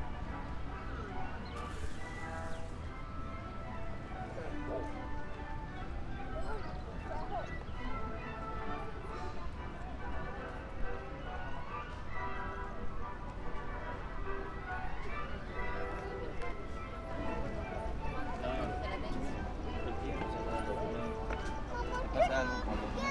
Parque de la Reserva, Jirón Madre de Dios, Cercado de Lima, Perú - Parque de la Reserva / Alameda de la integración
This mall is located next to the Parque de la Reserva de Lima, which has a history Reserve in honor of the reserve troops that participated during the War of the Pacific in the defense of the city of Lima. At the present time, the circuitomagico de las aguas, an icon of the city of Lima and symbol of the recovery of Lima's public spaces, operates. The integration mall also borders the national stadium of Peru, and with a church, a mall that is full of culinary mixtures for the delight of its public.